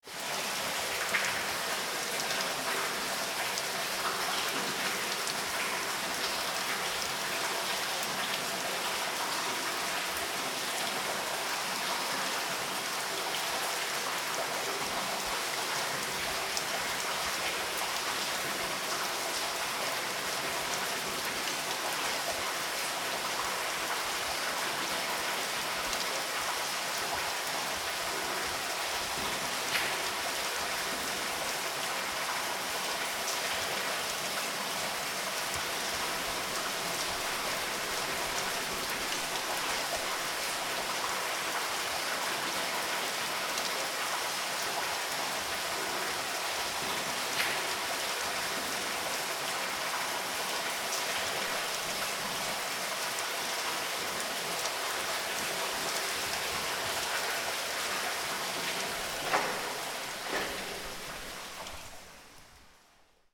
Mériel, France - Water Source of an abandonned underground Quarry
Il y a une source dans les carrières abandonnées d'Hennocque.
Il est même possible de se baigner dedans.
Somes of the tunnel of the abandonned Quarry Hennocque are flooded.
In this room you can even dive into the water.
/zoom h4n intern xy mic
7 January 2015